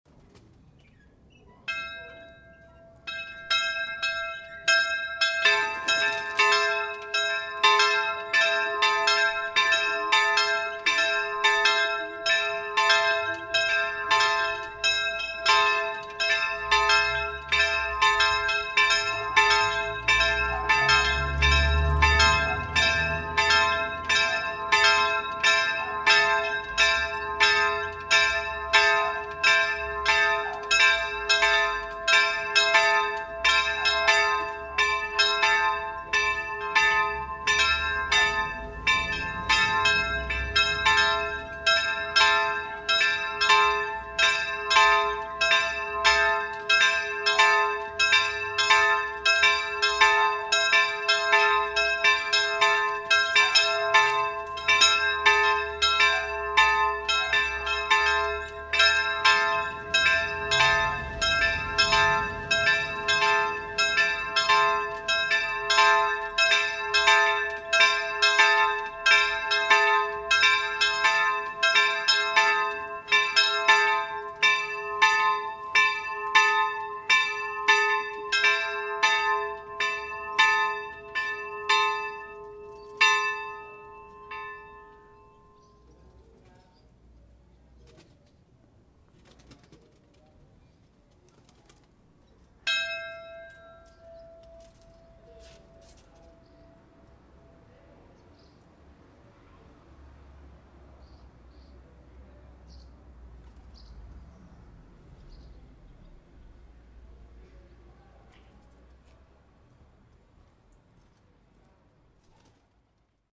In the backyard of the church. Some pigeons building a nest in the tower and the church bells. In the end a car passing by in the nearby narrow road.
Vianden, St. Nikolaus, Tauben und Glocken
Im Hinterhof der Kirche. Einige Tauben bauen ihr Nest im Turm und die Kirchenglocken. Am Ende der Aufnahme fährt ein Auto auf der nahe gelegenen Straße vorbei.
Vianden, Saint-Nicolas, pigeons et cloches
Dans la cour arrière de l’église. Des pigeons construisent un nid dans le clocher et dans les cloches de l’église. A la fin, une voiture qui passe sur la route étroite proche.
Project - Klangraum Our - topographic field recordings, sound objects and social ambiences
Vianden, Luxembourg, 8 August 2011